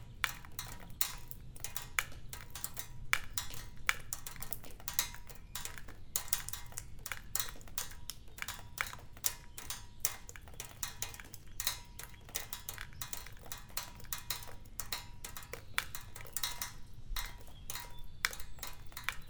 Aincourt, France - Abandoned sanatorium
Sound of the drops inside an abandoned sanatorium. Because of vandalism, everything is broken. Drops fall on a metal plate.